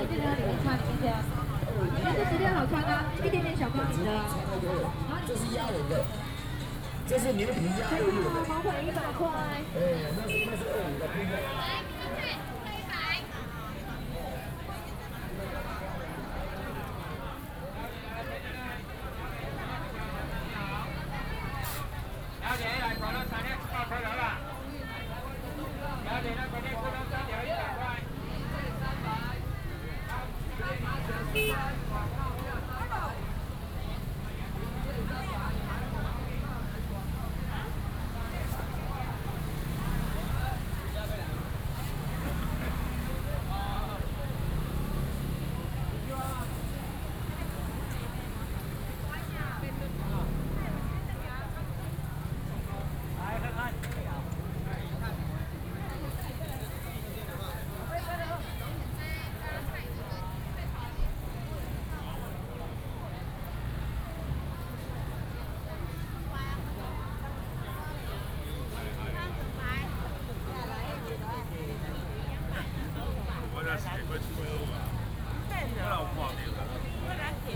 Zhongshan Rd., Houli Dist. - Walking in the traditional market
Walking in the traditional market